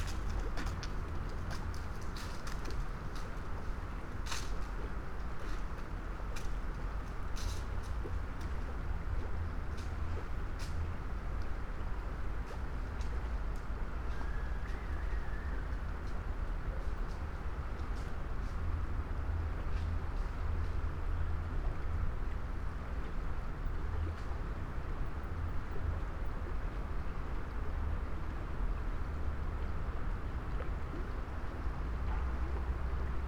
bats, dry leaves, plane trees, walkers, grey heron, water flow ...
Celje, Slovenia